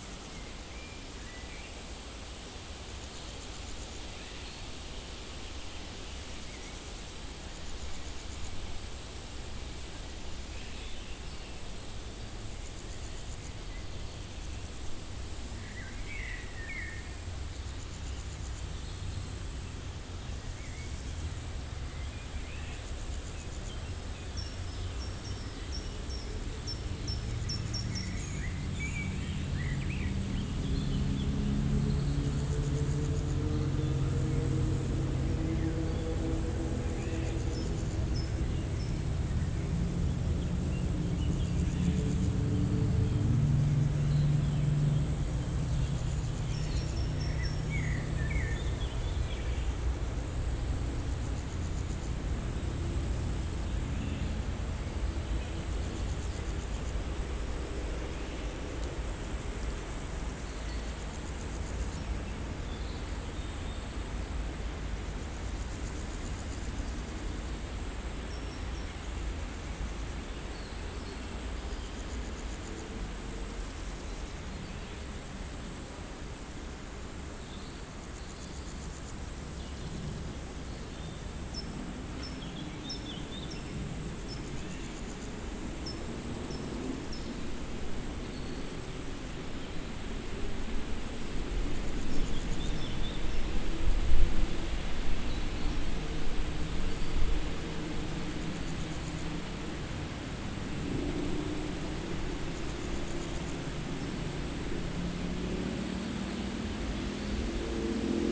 Rue de Vars, Chindrieux, France - Prairie stridulante
Par une chaude journée, les insectes stridulent dans une prairie sèche, le vent fait frémir les feuillages, quelques oiseaux des bois environnants chantent, tandis que la RD991 envoie ses ronronnements de motos plus ou moins agressifs .
19 June 2022, 18:20